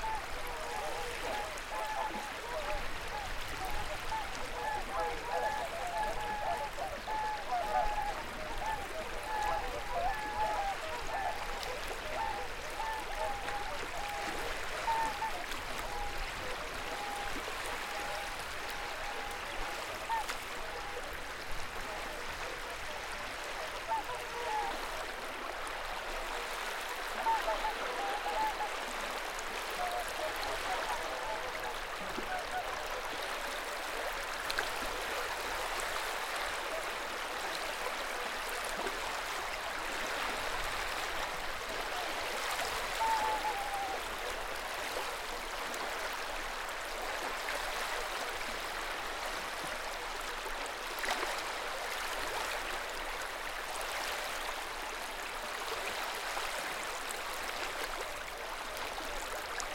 Swans on the sea, Matsalu Nature Park, Estonia
swans, waves and wind
Lääne maakond, Eesti